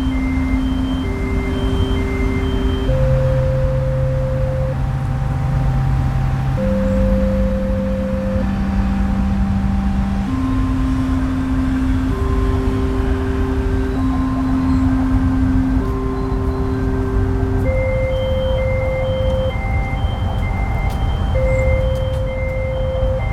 Cologne, Skulpturenpark, Deutschland - What every gardener knows
"What every gardener knows" is an outdoor audio installation of Susan Hiller in the Skulpurenpark Koeln. Strong traffic noise in the background